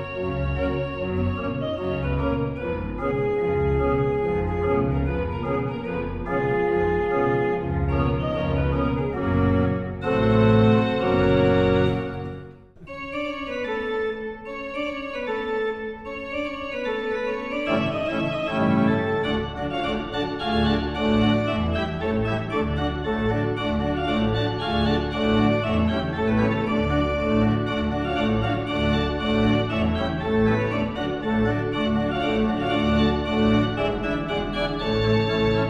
Fläsch, Schweiz - Orgelspiel in der Kirche
Bei einer Gesangsprobe. Der Organist Konrad Weiss improvisiert in einer Pause auf der kleinen Kirchenorgel. Wie so oft drücke ich zu spät auf den Rec Knopf.
Juni 1998
Fläsch, Switzerland, 9 July